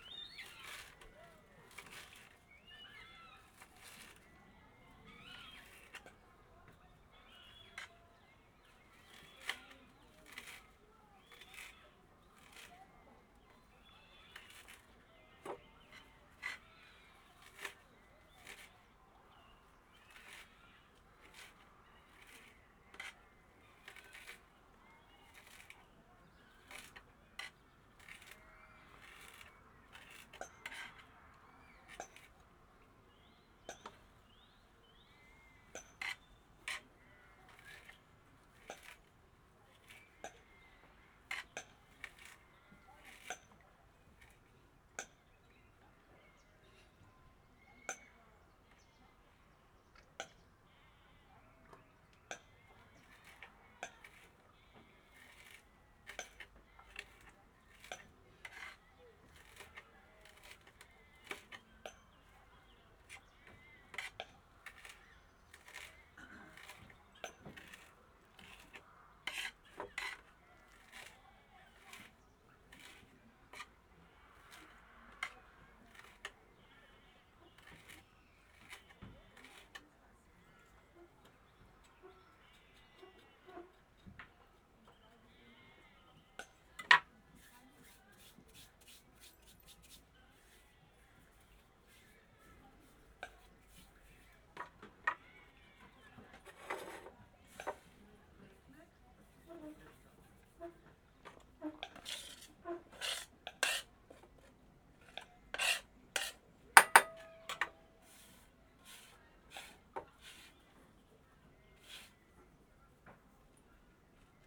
workum, het zool: marina, berth h - the city, the country & me: cooking aboard
cooking aboard, music from the nearby campsite, strange call of a coot (plop)
the city, the country & me: august 4, 2012